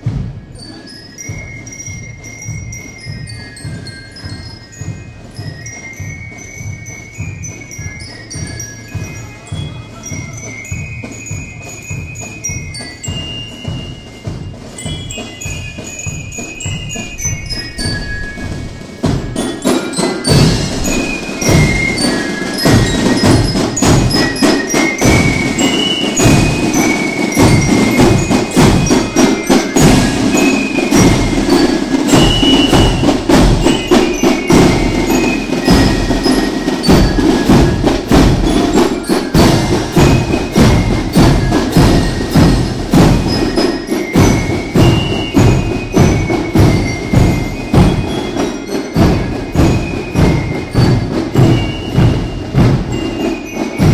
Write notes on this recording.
A surreal scenario unfolded under my window when I came back from Paris: a parade of marching bands of local gun clubs paraded through the streets (a funny contrast to the french experience giving the impression that, while French ALWAYS sit in bars et dans les Brasseries or make love during the day, Germans put on anachronsitic uniforms and march to military music) - without any audience aside the road! The groups nevertheless marched strictly in order, carrying their flags, wearing their uniforms and medals as if it was of a real purpose or importance.